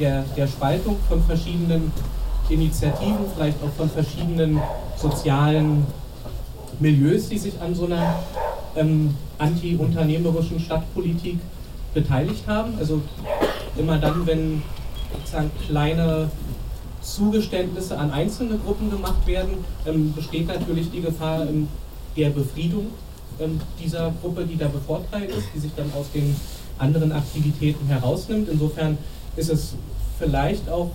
Dr. Andrej Holm. In welcher Stadt wollen wir leben? 17.11.2009. - Gängeviertel Diskussionsreihe. Teil 2
Mit der Besetzung des Gängeviertels entstand über Nacht eine selbstorganisierte „Traumstadt“ - mitten in Hamburg. Diese steht der Stadt des Ausschlusses und der Verdrängung, der Stadt des Höchstbieterverfahrens und der Stadt der Tiefgaragen, der Stadt des Marketings und der Stadt der Eventkultur entgegen. Das wirft die Frage auf, wie denn die Stadt eigentlich aussieht, in der wir alle leben wollen.
Darüber möchte die Initative „Komm in die Gänge“ eine lebhafte Diskussion in der Hamburger Stadtbevölkerung anregen, denn u.a. mit der Besetzung des Gängeviertels wurde die Frage zwar endlich auf die stadtpolitische Tagesordnung gesetzt, aber entsprechend unserer Forderung nach „Recht auf Stadt“ für alle, soll die Diskussion darüber vor allem von den StadtbewohnerInnen selber getragen werden.
Hamburg, Germany